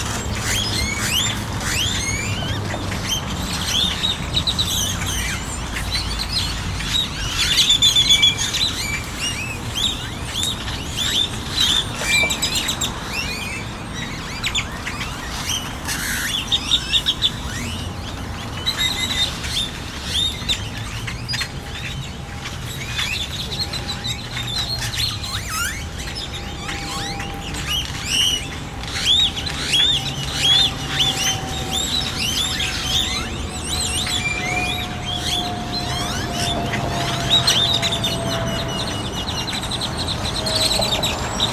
{
  "title": "Grackles #1 (this is Houston), Neartown/ Montrose, Houston, TX, USA - Grackle Colony (this is Houston)",
  "date": "2012-11-09 17:45:00",
  "description": "The first of several recordings I'll make of Grackle colonies around Houston, Texas. Inspired by my good friend, Christine, who's been living far away from The South for a long while - and who appreciates these urban birds. R.I.P. Chloe, who recently turned 20 yrs of age and will leave us tomorrow after a long life of reaping and sowing joy.",
  "latitude": "29.75",
  "longitude": "-95.40",
  "altitude": "21",
  "timezone": "America/Chicago"
}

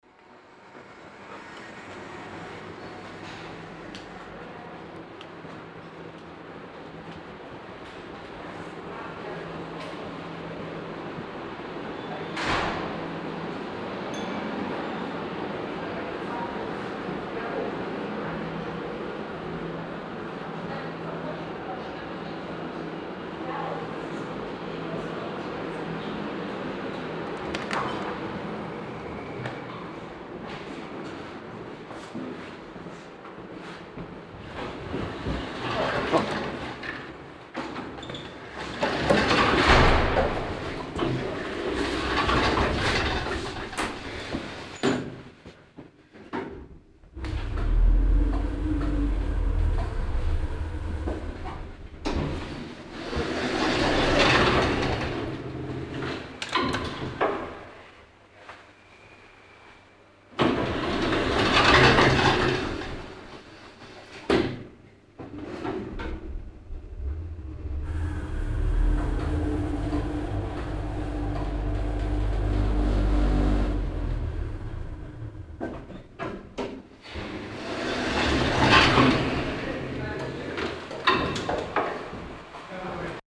{
  "title": "monheim, sankt josef krankenhaus, eingang + aufzug",
  "description": "eingang zum krankenhaus, betreten und fahrt mit dem aufzug",
  "latitude": "51.09",
  "longitude": "6.89",
  "altitude": "45",
  "timezone": "GMT+1"
}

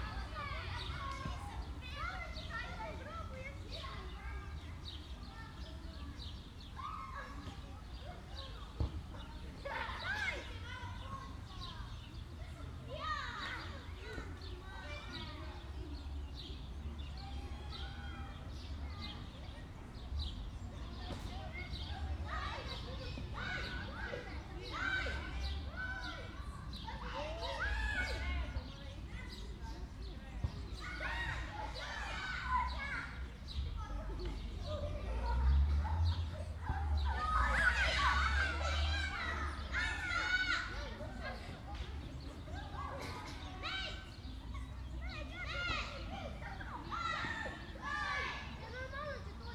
{
  "title": "Maribor, Iztokova ulica - schoolyard",
  "date": "2012-05-30 11:40:00",
  "description": "schoolyard ambience at Iztokova road\n(SD702 DPA4060)",
  "latitude": "46.56",
  "longitude": "15.63",
  "altitude": "279",
  "timezone": "Europe/Ljubljana"
}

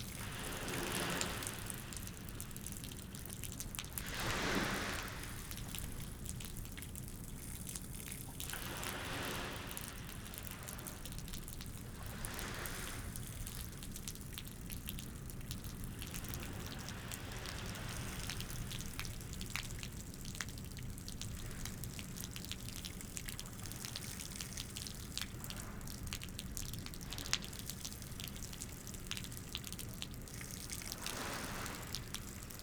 {
  "title": "Harbour Rd, Seahouses, UK - broken guttering ...",
  "date": "2018-11-04 16:15:00",
  "description": "broken guttering ... a gentle shower produces a steady flow ... bird call ... herring gull ... background noise ... lavalier mics clipped to baseball cap ...",
  "latitude": "55.58",
  "longitude": "-1.65",
  "altitude": "6",
  "timezone": "Europe/London"
}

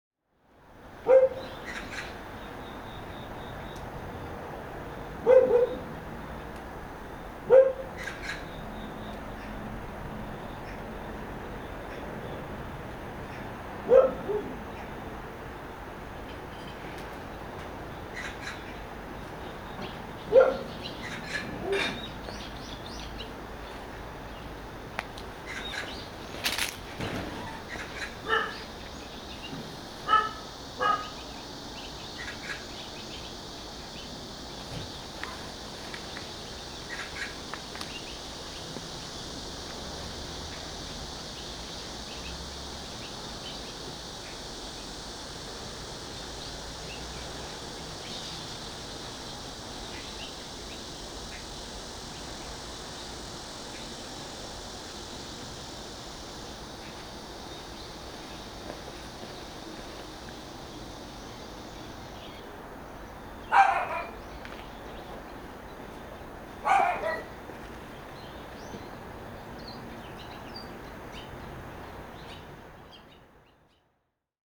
{
  "title": "대한민국 서울특별시 서초구 신원동 - Rural side, Dog barking",
  "date": "2019-09-01 18:41:00",
  "description": "Rural side, Dog barking\n주택가, 개 짖음",
  "latitude": "37.45",
  "longitude": "127.05",
  "altitude": "32",
  "timezone": "Asia/Seoul"
}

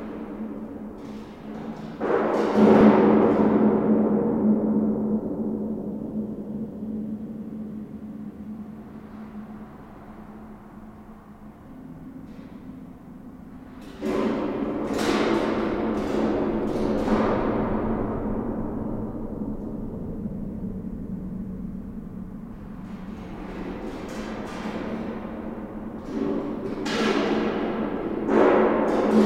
{
  "title": "Genappe, Belgique - Inside the bridge",
  "date": "2016-04-15 12:35:00",
  "description": "Inside an higway bridge. There's a special intense reverberation in the bridge tunnels. In aim to valorize this reverberation, I'm playing with an abandoned tin of olive oil. I'm doing nothing else than pushing it slowly, and sounds became quickly atrocious.",
  "latitude": "50.62",
  "longitude": "4.53",
  "altitude": "75",
  "timezone": "Europe/Brussels"
}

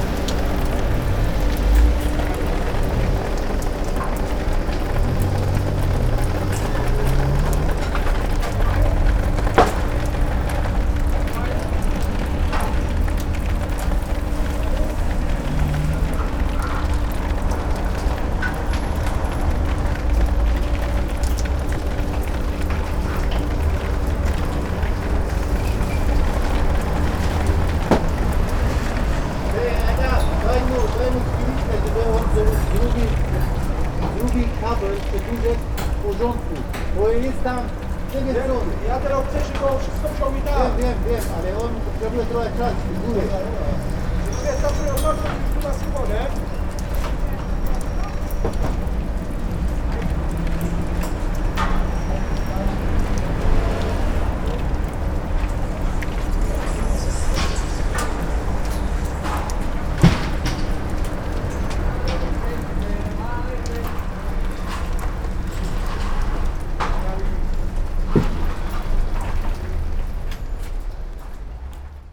{
  "title": "Poznan, Jezyce district, Kochanowskiego - watery scaffolding",
  "date": "2019-09-23 14:40:00",
  "description": "lots of water dripping on the sidewalk and a plastic tarp from a scaffolding. workers talking among each other and with the foreman. moving tools and objects. (roland r-07)",
  "latitude": "52.41",
  "longitude": "16.91",
  "altitude": "84",
  "timezone": "Europe/Warsaw"
}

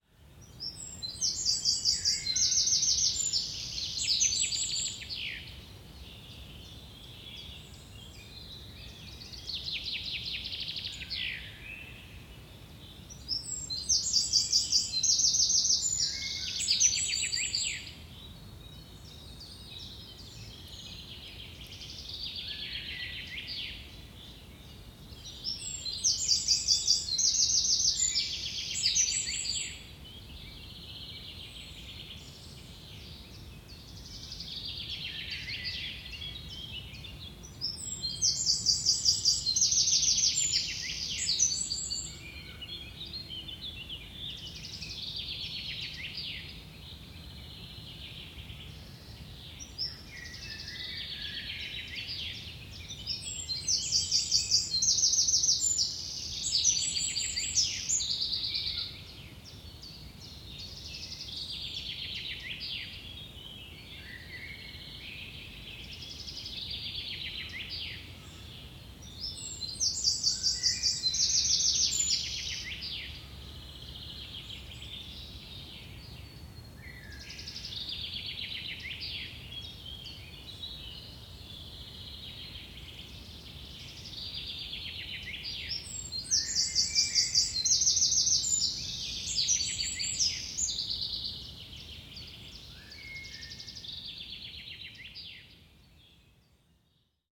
{
  "title": "Münsing, Deutschland - Forest near Münsing - birds singing",
  "date": "2011-05-10 09:25:00",
  "description": "Forest near Münsing - birds singing. [I used the Hi-MD recorder Sony MZ-NH900 with external microphone Beyerdynamic MCE 82]",
  "latitude": "47.90",
  "longitude": "11.34",
  "altitude": "634",
  "timezone": "Europe/Berlin"
}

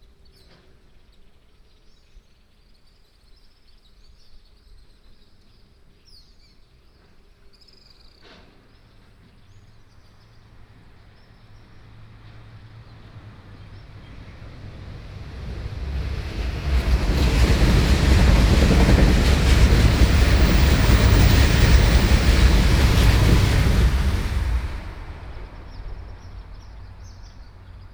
{
  "title": "Jiajinlin, Dawu Township, Taitung County - Entrance to the village",
  "date": "2018-04-13 16:35:00",
  "description": "Construction sound, Bird sound, Swallow, Entrance to the village, Train passing\nBinaural recordings, Sony PCM D100+ Soundman OKM II",
  "latitude": "22.42",
  "longitude": "120.93",
  "altitude": "24",
  "timezone": "Asia/Taipei"
}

